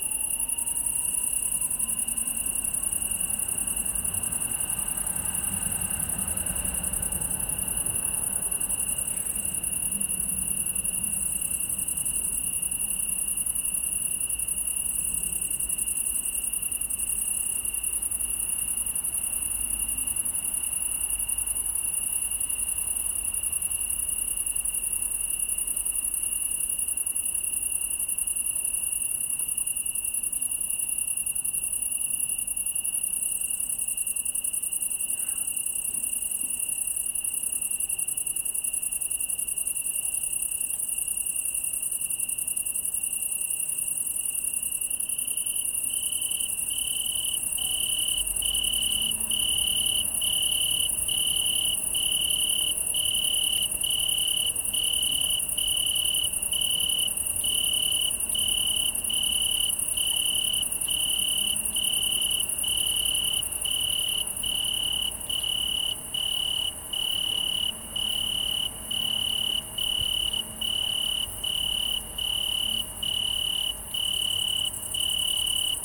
Italian tree cricket is present in most of Europe, especially in the countries around the Mediterranean. The northern boundary runs through northern France, Belgium, southern Germany, the Czech Republic and southern Poland. Adults can be encountered from July through October. These crickets are mainly nocturnal. The males rub their wings together to produce a subtle but constant. They sing from about five o'clock until three o'clock in the morning. After mating, the female lays her eggs in plant stems, especially in grape. In June the nymphs live in the tissue and leaves of the plant. A few days after the last molt the male begins to sing. The hum is coming from the highway bellow.
Praha, Česko, 6 January